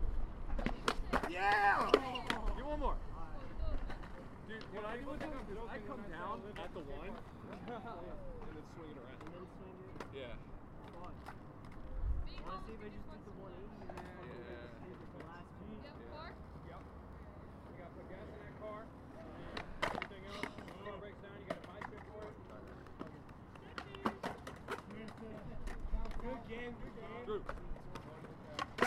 E Pikes Peak Ave, Colorado Springs, CO, USA - Memorial Skate Park
skater boys and girls
14 May 2018, 18:58